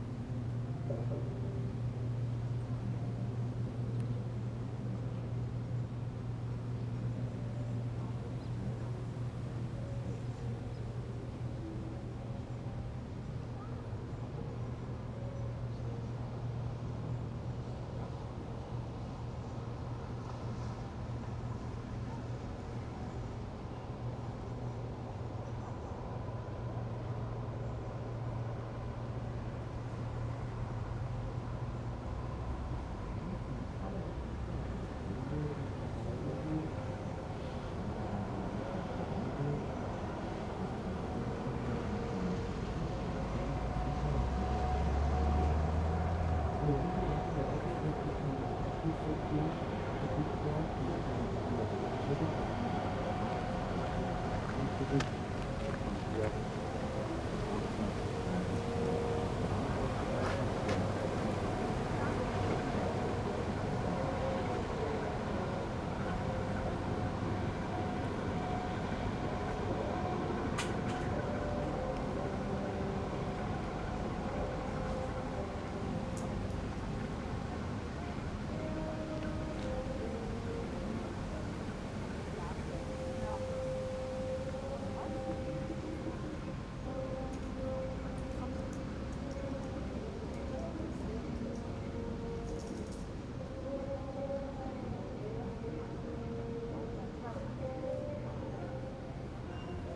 Berlin, Stralau - waterplane lift off
Berlin Stralau, Spree river bank ambience, various traffic: waterplane starting, joggers, bikers, boats